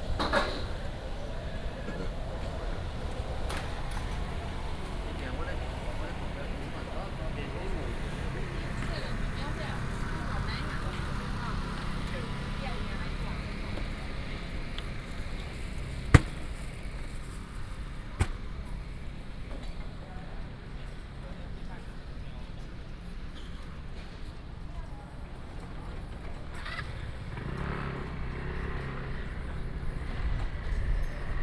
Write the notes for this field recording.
Outside the fishing port, Late night fishing port street, Traffic sound, Seafood Restaurant Vendor, Binaural recordings, Sony PCM D100+ Soundman OKM II